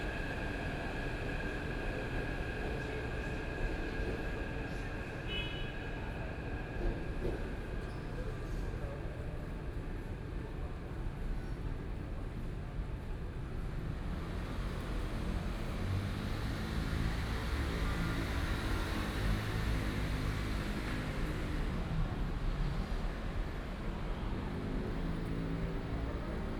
Walking along the bottom of the track, walking into the MRT station, Traffic Sound